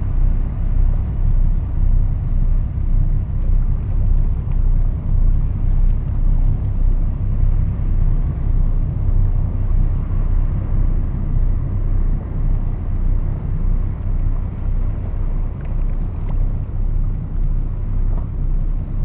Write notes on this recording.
A boat is passing by on the Seine river. On this early morning, this is an industrial boat transporting containers.